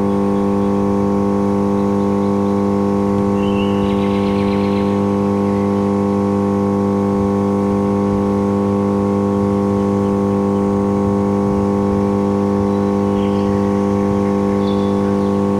Morasko, campus of UAM univeristy - power station
recorded at the door of a power station. coarse buzz of a transformer. bird chirps echoing of a wall of a nearby building.
Poznan, Poland